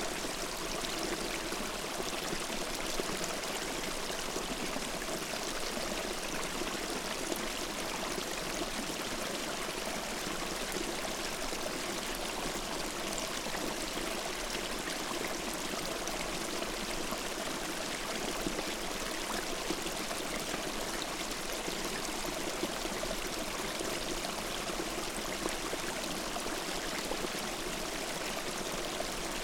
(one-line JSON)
{"title": "Utena, Lithuania, winter stream", "date": "2017-01-17 15:10:00", "description": "there's frozen litttle river, but I've found a place with open streamlet", "latitude": "55.50", "longitude": "25.57", "altitude": "106", "timezone": "GMT+1"}